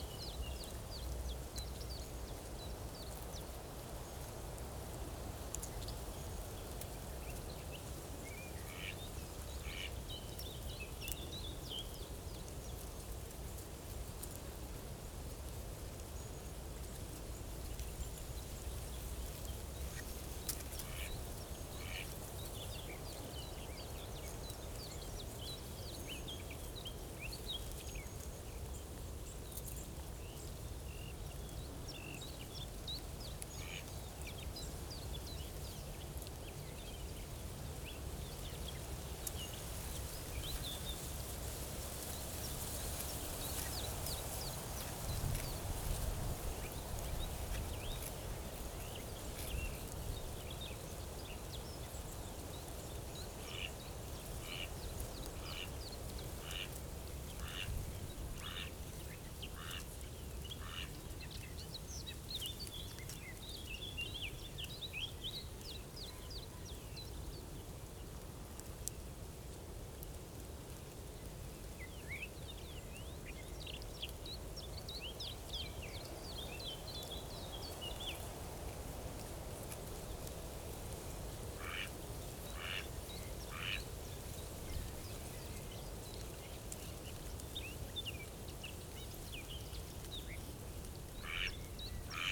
Lietzengraben, Berlin Buch, Deutschland - quiet field ambience in spring
having a rest at Lietzengraben, a half natural half man made ditch, little river, creek or brook, which is of high importance to the ecological condition in this area. Quiet field ambience with gentle wind in trees and dry weed.
(Tascam DR-100MKIII, DPA4060)